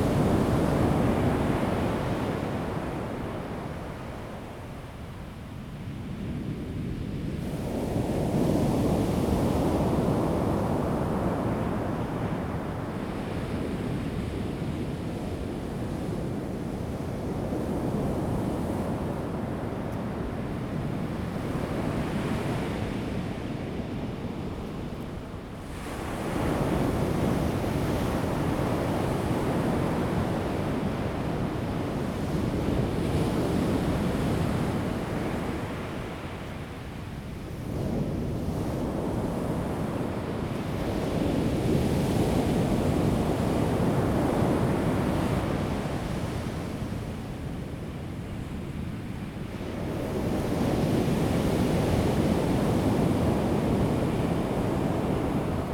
at the beach, Sound of the waves
Dawu Township, 台9線145號, 28 March 2018, ~09:00